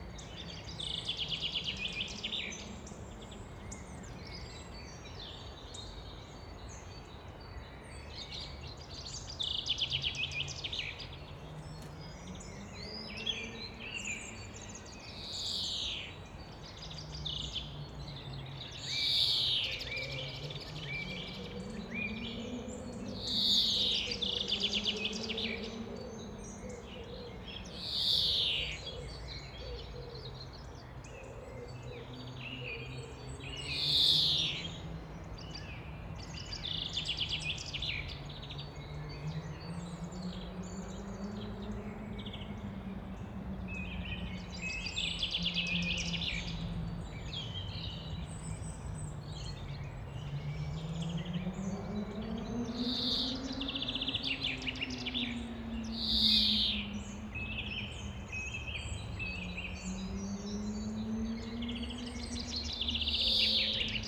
Ostfriedhof, Ahrensfelde, Deutschland - graveyard ambience
ambience at the graveyard Ostfriedhof, birds, city sounds
(SD702, AT BP4025)